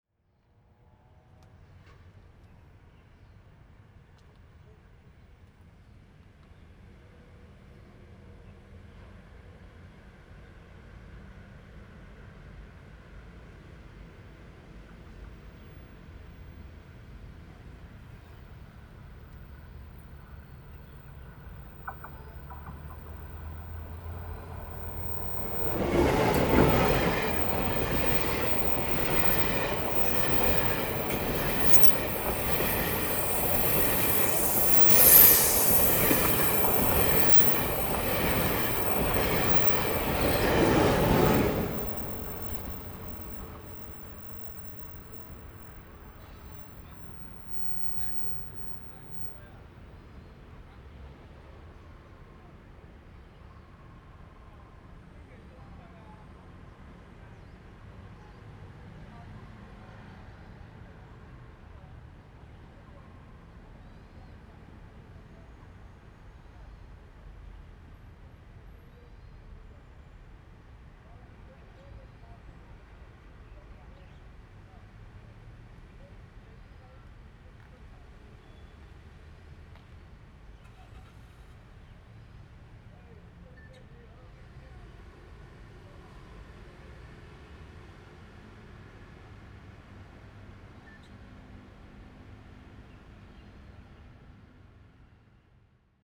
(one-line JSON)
{"title": "Chenggong Rd., Miaoli City - the train runs through", "date": "2017-03-22 16:53:00", "description": "The train runs through, Next to the tracks, Bird sound, Traffic sound\nZoom H2n MS+XY +Spatial audio", "latitude": "24.56", "longitude": "120.82", "altitude": "53", "timezone": "Asia/Taipei"}